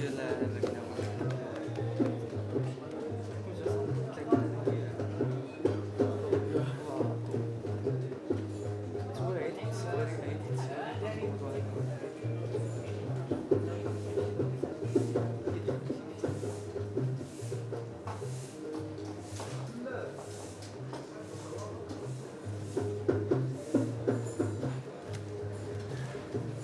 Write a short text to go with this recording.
sounds of the outside market cleanup, only a few people are on the streets of the Mediana. the 12h radio peformance curated by artists Berit Schuck and Julia Tieke reaches its last hour. (Olympus LS5)